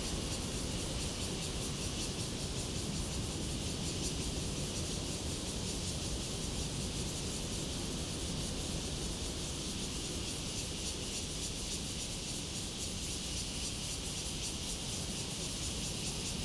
Taitung County, Taiwan - Cicadas sound
Cicadas sound, Traffic Sound
Zoom H2n MS+XY